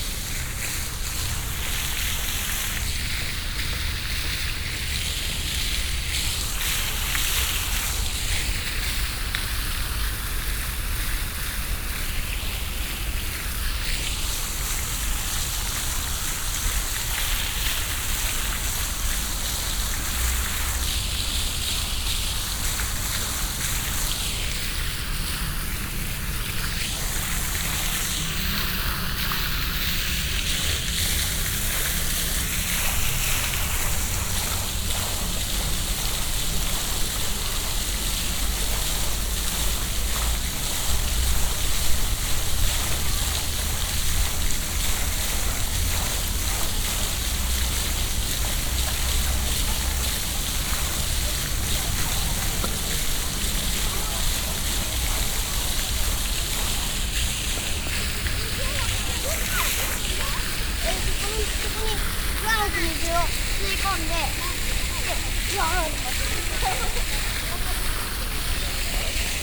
yokohama, fountain at stadium
A water fountain close to the baseball stadium. The sound of the water spraying unregular in the early morning wind and then a bigger crowd of school pupils passing by two by two.
international city scapes - social ambiences and topographic field recordings